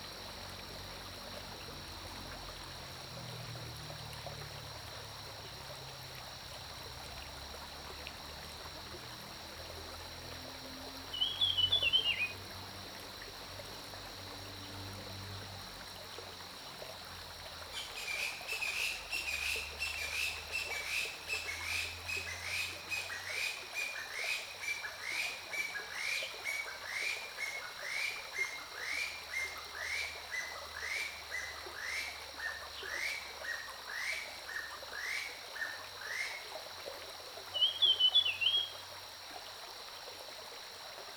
中路坑溪, 桃米里 Puli Township - Stream and Birdsong
Early morning, Bird calls, Brook
Zoom H2n MS+XY